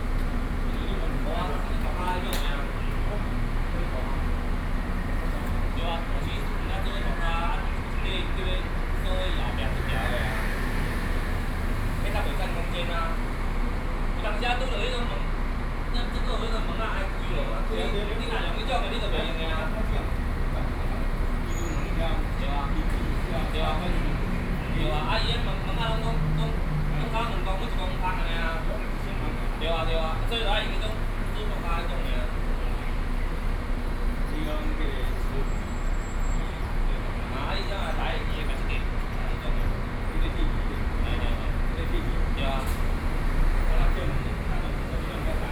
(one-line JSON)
{"title": "Neihu, Taipei - gallery's entrance", "date": "2013-07-09 16:45:00", "description": "In the gallery's entrance, Workers are repairing the door, Traffic Noise, Sony PCM D50 + Soundman OKM II", "latitude": "25.08", "longitude": "121.57", "altitude": "10", "timezone": "Asia/Taipei"}